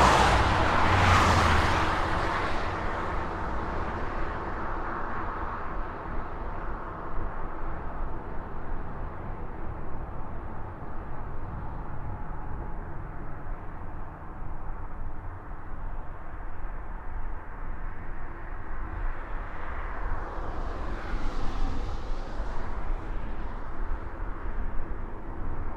Ringaudai, Lithuania - Highway traffic, side rails
Composite stereo field and dual contact microphone recording of highway traffic. Contact microphones capture droning and reverberating side rails, as the cars and trucks are passing by. Recorded with ZOOM H5.